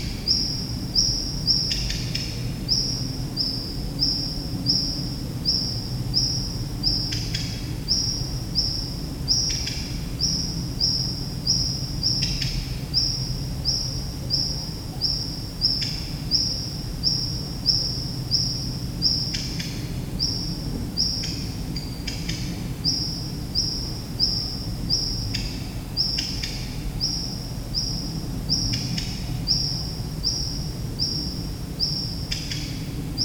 Charleroi, Belgique - Common Redstart
Into the 'AGC Roux' abandoned factory, an angry Common Redstart, longly shouting on different places of a wide hall.
Charleroi, Belgium, 5 August 2018